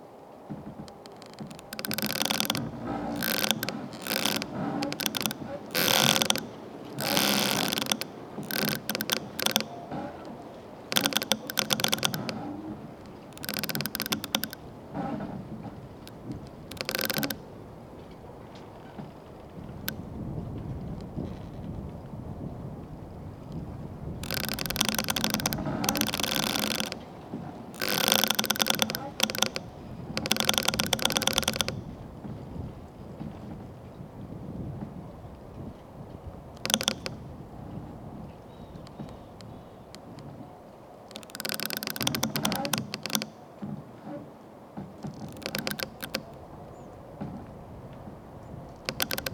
Lithuania, Utena, creaking tree
its always fascinatint to listen to creaking trees